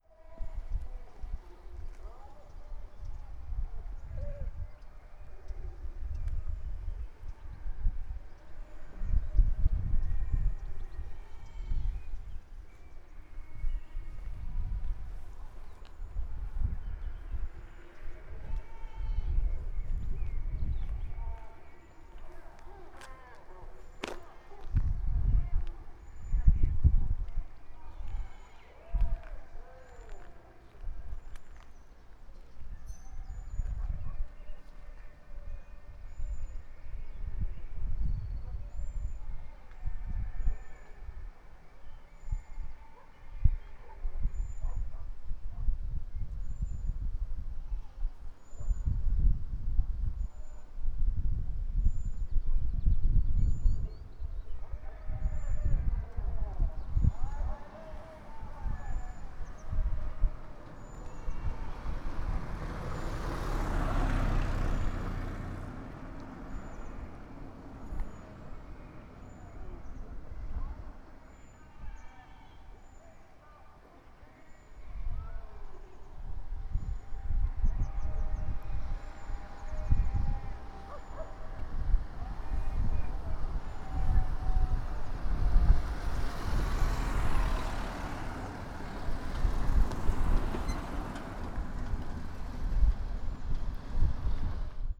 away in the distance a faint, echoed sounds of a truck with a big speaker attached to its roof, advertising for or anouncing something, climbing the narrow, winding mountain road.
Quinta Grande, Portugal